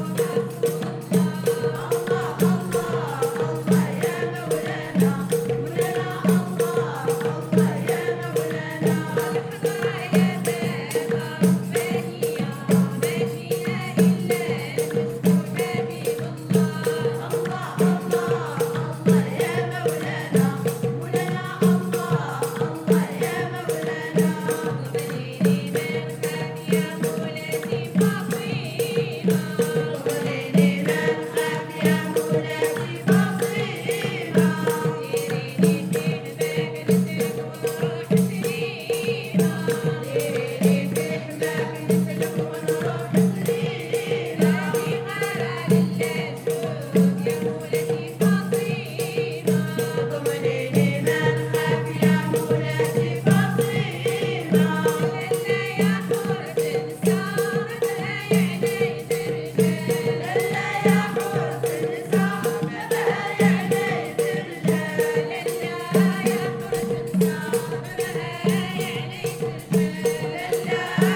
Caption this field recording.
In Dar Bellarj Fondation, a group of women sing a sufi song during the 5th Marrakesh Biennale. One of them, Maria, talk about the project.